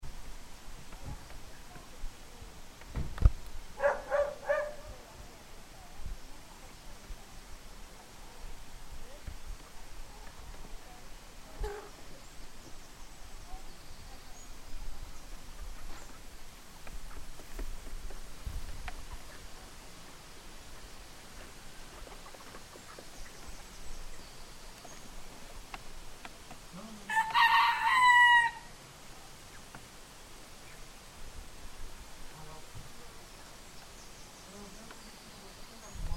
Backyard animals next to a mountain cabin aside a river